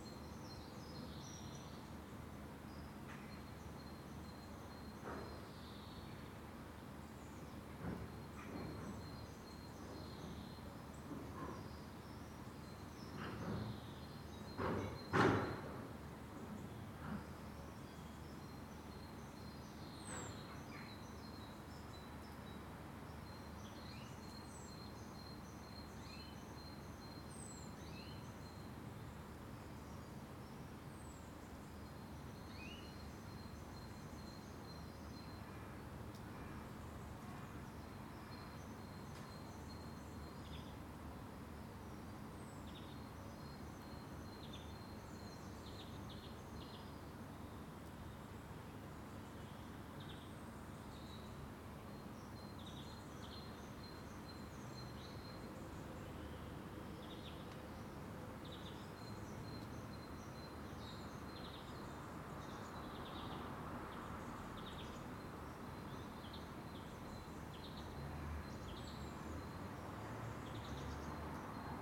Contención Island Day 49 inner northeast - Walking to the sounds of Contención Island Day 49 Monday February 22nd
The Poplars Roseworth Avenue The Grove Roseworth Crescent Roseworth Close
An unlikely haven
from the sounds of traffic
An ivy-grown wall
two pruned birch trees beyond
Birds flick through the shrubbery
The delivery man places the parcel
rings the bell
and leaves